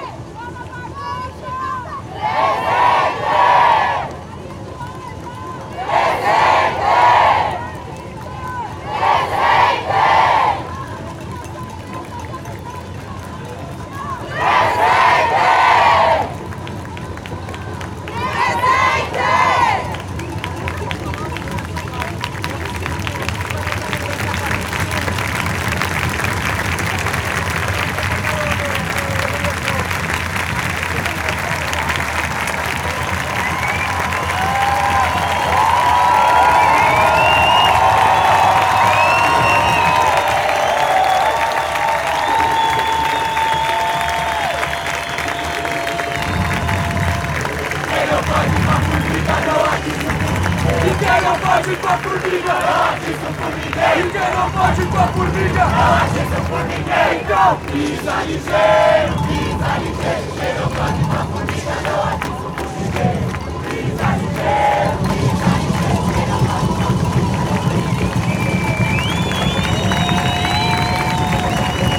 Av. Paulista, São Paulo - Manifestaçao Assassinato Marielle Franco (Sao Paulo)
Sound Recording of the demonstration against the murder of Marielle Franco a few days ago.
Recorded on Avenida Paulista in Sao Paulo, on 15th of March.
Recording by a ORTF Schoeps CCM4 setup on a Cinela Suspension+windscreen.
Recorded on a Sound Devices 633
- Bela Vista, São Paulo - SP, Brazil